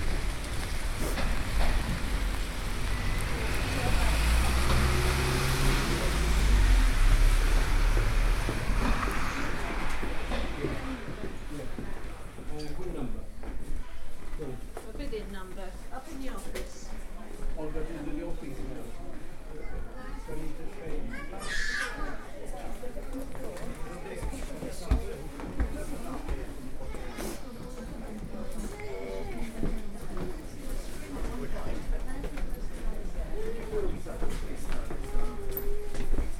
Jacksons of Reading, Jacksons Corner, Reading, UK - Entering the auction at Jackson's of Reading, and attempting to pick up a bidding card
Jacksons of Reading was a family-owned department store in Reading opened in 1875 by Edward Jackson. The store was kept in the family, and traded goods to the public until December 2013. After its closure, in January 2014, all of the old shop fittings and fixtures were offered up for sale by public auction. This is the sound of me entering the auction from King's Walk, going into the labyrinthine system of rooms; and fighting my way to the offices to pick up my bidding card. You can get some sense of the numbers of people who turned up for the auction, in the level of chatter! Recorded on sound professional binaural microphones, stealthily worn in the crowd to document this momentous, collective experience of huge change and loss in the locality. This was recorded at the start of the auction, and various recordings follow in a sequence, documenting some of the historic moments that occurred while I was there, hoping to secure lots 74 and 75 (which I did not do!)
West Berkshire, UK, 4 January 2014